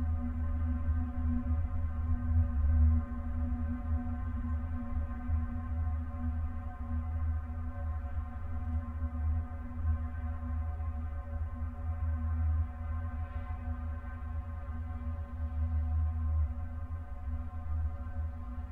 small omnis placed inside the supporting pipe of metallic fence
Lithuania, 4 March 2016, 13:55